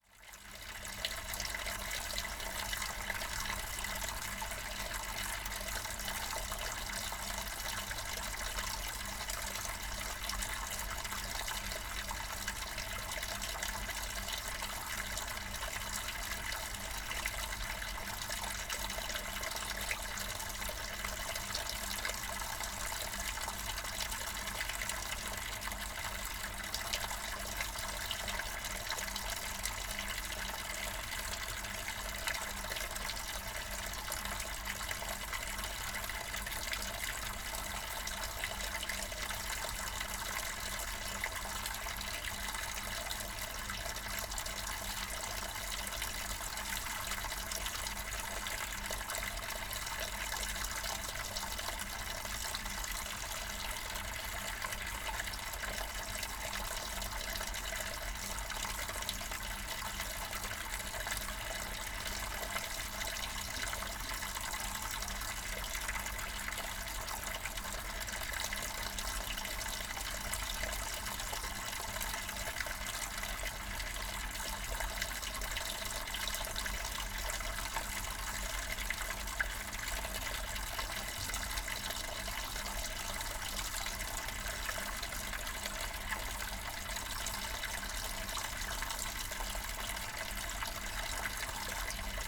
bad freienwalde/oder: kurfürstenquelle - the city, the country & me: fountain
fountain
the city, the country & me: december 31, 2014
Bad Freienwalde (Oder), Germany, 31 December, ~15:00